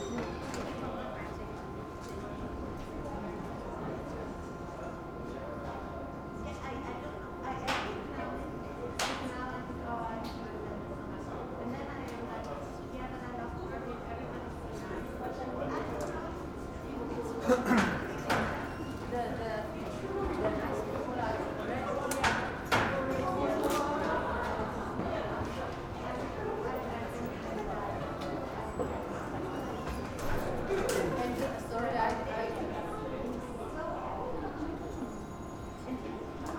Central, Berlin Mitte - yard ambience
Hinterhof, entrance area of Central cinema
(Sony PCM D50)